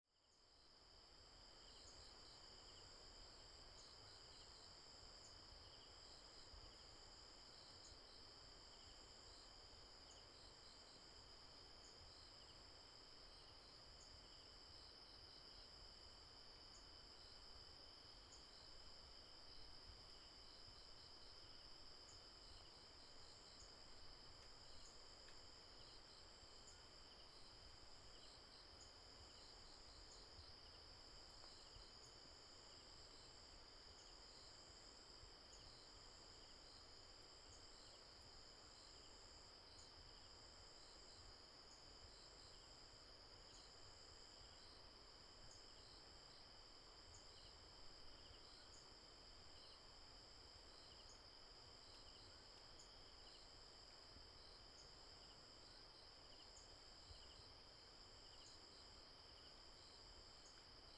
Tapaw Farm, 達仁鄉台東縣 - Late night in the mountains
Late night in the mountains, Bird cry, Insect noise, Stream sound
Taitung County, Taiwan